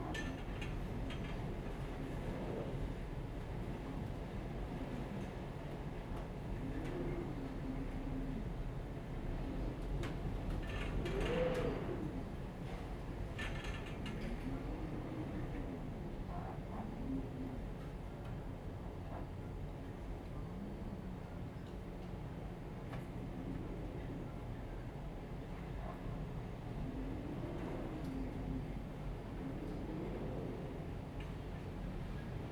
大仁街, Tamsui Dist., New Taipei City - wind
typhoon, Gale
Zoom H2n MS+XY
New Taipei City, Taiwan, 8 August 2015, ~16:00